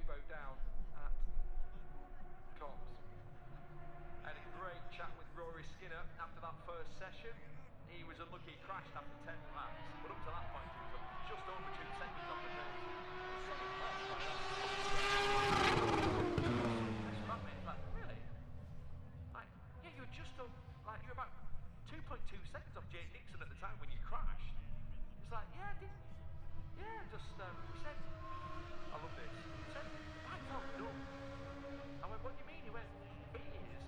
Silverstone Circuit, Towcester, UK - british motorcycle grand prix 2022 ... moto two ...
british motorcycle grand prix 2022 ... moto two free practice two ... zoom h4n pro integral mics ... on mini tripod ...
England, UK, 5 August 2022